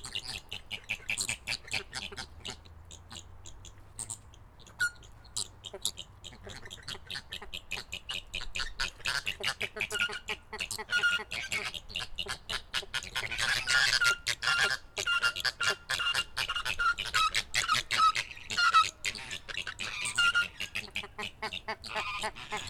Poznan, zoological garden, flamingos pen